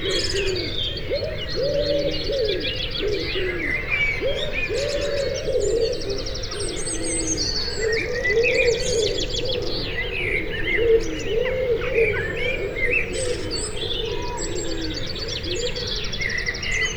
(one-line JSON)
{"title": "Dawn, Malvern, UK - 4am", "date": "2021-06-09 04:00:00", "description": "Again recorded from 4am but this time the microphone rig is on the other side of the roof facing west towards the Malvern Hills a few hundred yards away and rising to around 1000 feet at this point. The roof has another feature facing the right hand mic which seems to produce an effect visible on the computer. At 9'47\" my neighbour about 30 yards away across the road comes out to collect his milk and says \"Morning Birdies\" which stops all the most local bird calls for a time. Around 23'00 I think that is the Muntjac trying to make itself heard.This is another experiment with overnight recordings of longer duration.\nMixPre 6 II with 2 x Sennheiser MKH 8020s in a home made wind baffle.", "latitude": "52.08", "longitude": "-2.33", "altitude": "120", "timezone": "Europe/London"}